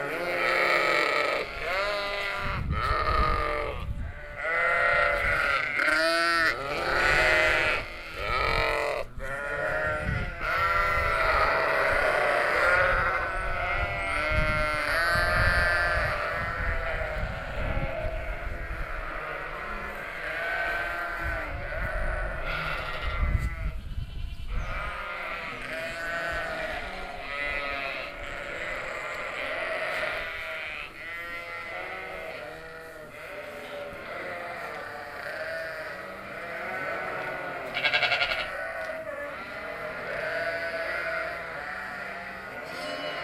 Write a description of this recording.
easter sheeps on the meadow, international soundmap : social ambiences/ listen to the people in & outdoor topographic field recordings